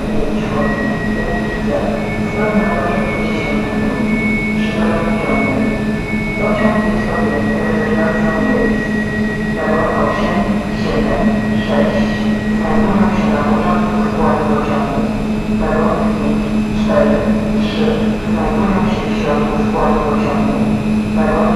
recorded on a platform of "summer station". local train idling. there is nobody on the platform to listen to the announcements about trains on different platforms. (roland -r07)